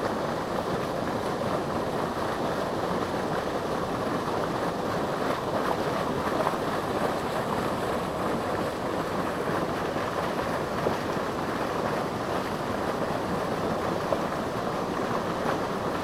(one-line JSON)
{"title": "ERM fieldwork -Ohakvere basin drains", "date": "2010-07-05 13:02:00", "description": "5 drains of the basin", "latitude": "59.22", "longitude": "27.47", "timezone": "Europe/Berlin"}